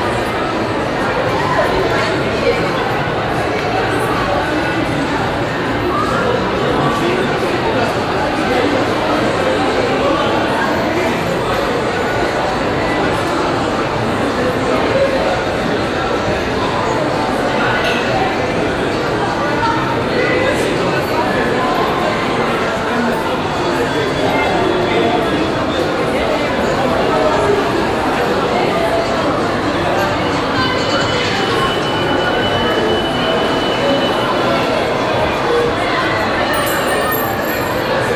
Le Passage, Tunis, Tunesien - tunis, central parc, shopping mall
Inside a newly build shopping mall, western style. A walk thru the ground and first floor with taking the moving staircases. The sound of Shopping Muzak, upstairs people eating fast food, and the steps and conversation of several people inside the building.
international city scapes - social ambiences and topographic field recordings
Tunis, Tunisia, 2 May 2012, 18:00